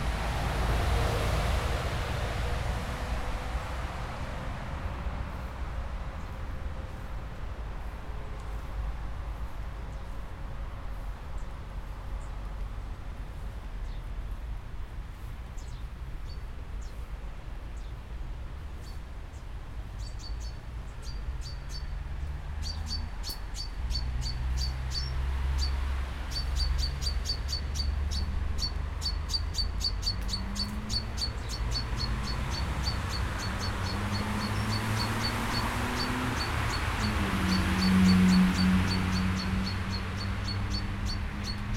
Weggeräusche im Faulerbad Freiburg, der Liegewiese des Hallenbades
Spaziergang ohne zu liegen auf der Liegewiese des Faulerbades in Freiburg